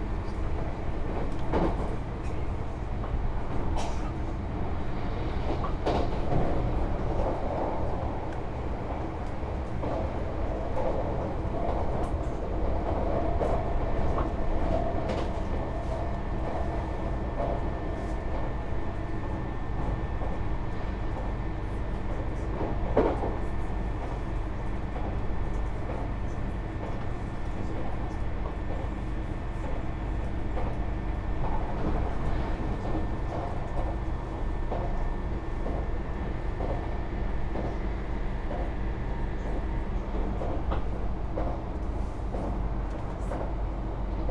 {"title": "keisei main line, Chiba Prefecture, Japan - skyliner, 9'08''", "date": "2013-11-07 19:25:00", "description": "skyliner, express train, from narita airport to ueno station, train passes different space conditions", "latitude": "35.71", "longitude": "140.10", "altitude": "13", "timezone": "Asia/Tokyo"}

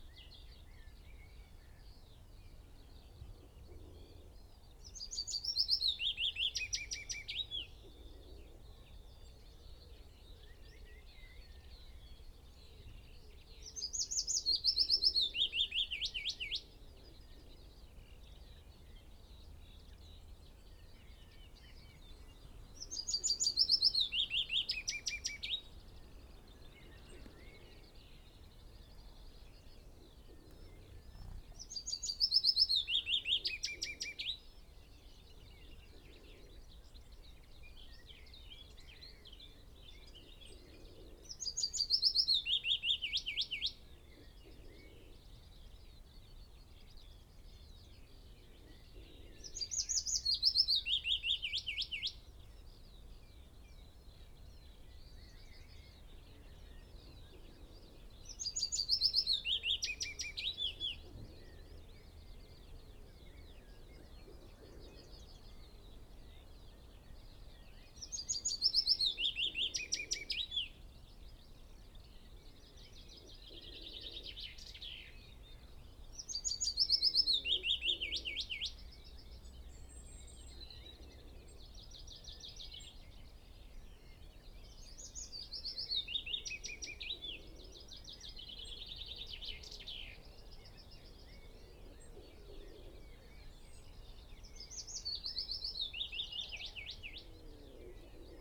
{"title": "Green Ln, Malton, UK - willow warbler song ...", "date": "2021-05-11 06:39:00", "description": "willow warbler song ... dpa 4060s clipped to a bag wedged in the crook of a tree to Zoom H5 ... bird calls ... song from ... pheasant ... yellowhammer ... wood pigeon ... chaffinch ... skylark ... magpie ... wren ... linnet ... blackbird ... blackcap ... lesser whitethroat ... unattended extended unedited recording ... background noise ...", "latitude": "54.12", "longitude": "-0.57", "altitude": "96", "timezone": "Europe/London"}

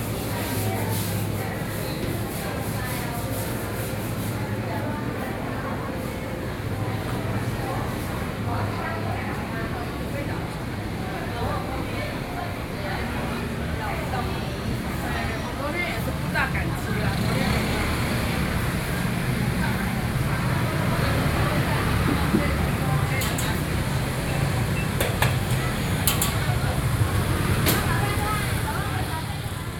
{"title": "三重中央市場, New Taipei City - Traditional markets", "date": "2012-11-09 11:37:00", "latitude": "25.06", "longitude": "121.50", "altitude": "14", "timezone": "Asia/Taipei"}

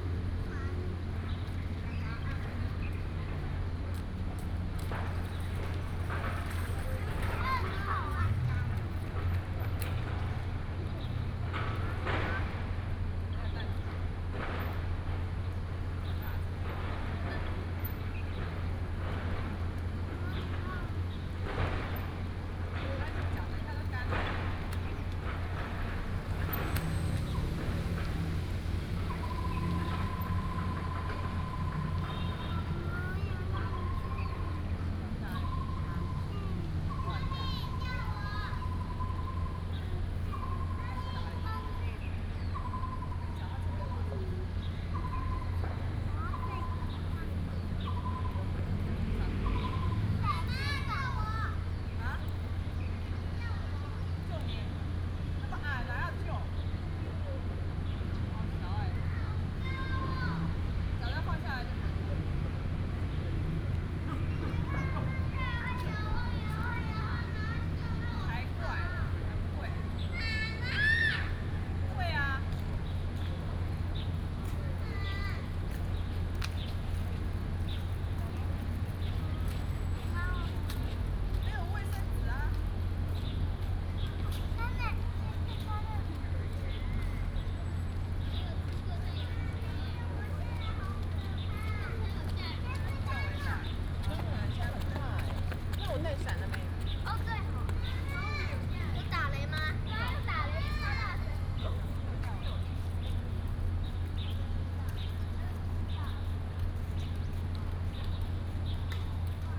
{"title": "嘉興公園, Da’an Dist., Taipei City - in the Park", "date": "2015-07-30 17:18:00", "description": "in the Park, Mother and child, Bird calls, This park is rebuilding", "latitude": "25.02", "longitude": "121.55", "altitude": "16", "timezone": "Asia/Taipei"}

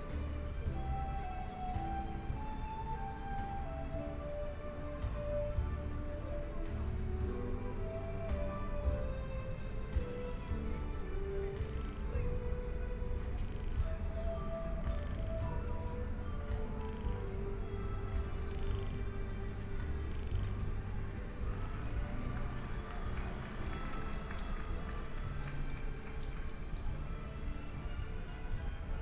{"title": "中山區金泰里, Taipei City - Walking along the outside of shopping malls", "date": "2014-02-16 19:00:00", "description": "Traffic Sound, Walking along the outside of shopping malls\nPlease turn up the volume\nBinaural recordings, Zoom H4n+ Soundman OKM II", "latitude": "25.08", "longitude": "121.56", "timezone": "Asia/Taipei"}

